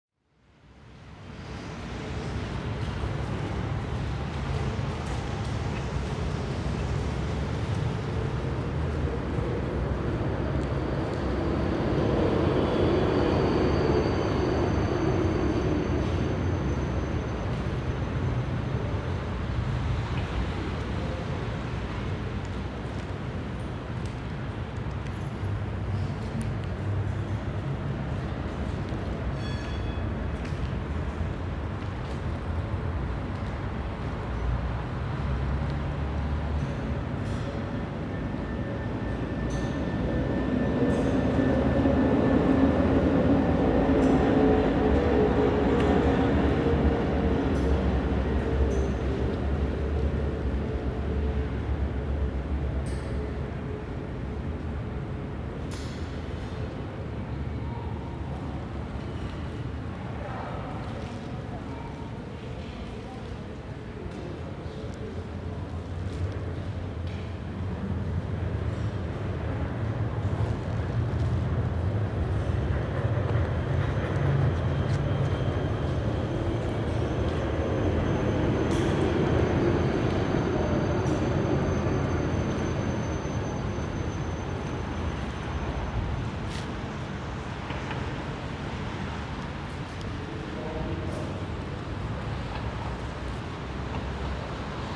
empty space under the S-Bahn tracks, Aporee workshop
radio aporee sound tracks workshop GPS positioning walk part 5, Alexanderplatz station
Berlin, Germany, 1 February 2010